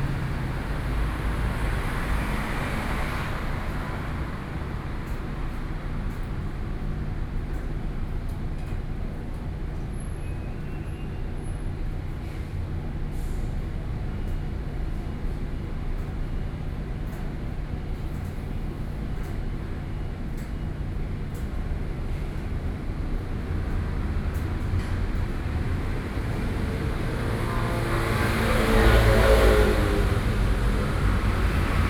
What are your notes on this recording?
Traffic noise, In front of the Laundromat, Sony PCM D50 + Soundman OKM II